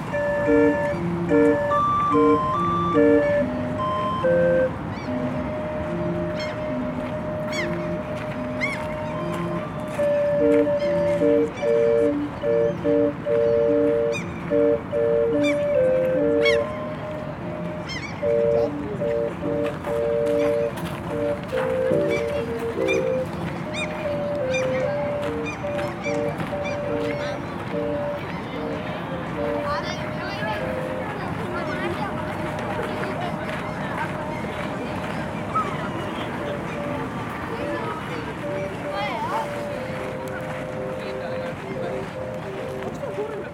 Helsinki - Organ Player and Gulls
Barrel Organ Player and Gulls.